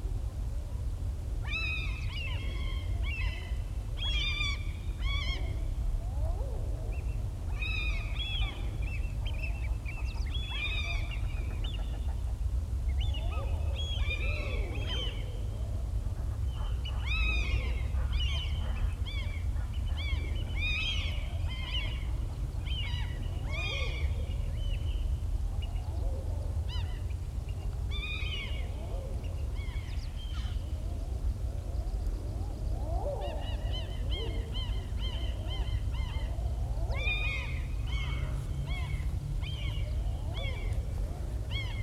Whitepark Bay, Northern Ireland - An unbelievably calm day, springtime activity in the natural amphitheater
At this jagged promontory in the stunning Northern Ireland coastline the cliffs and bare rocks form a natural amphitheater that gives the soundscape a reverberant quality it would not have in the open. On this unbelievably warm, calm day it creates a very special atmosphere. Gulls, eider ducks, oystercatchers, rock pipits, cormorants and people all contribute. The distant shouts are an extreme sports group (Aquaholics) that leap off cliffs into the sea below. The rather sinister bass is a helicopter for wealthy tourists to see the view from above. They regularly fly over but even when on the ground the drone, 5km away, is constant and never stops.